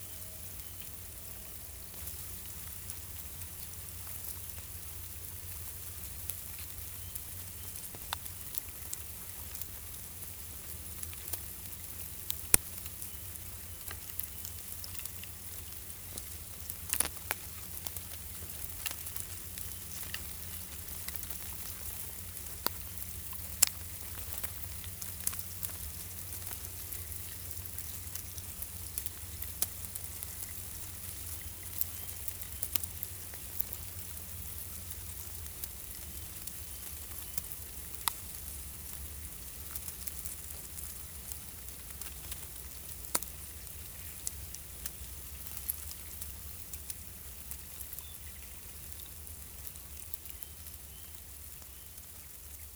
Saint-Laurent-du-Pont, France - Ants
A big anthill in the forest. Happy ants are working.
March 2017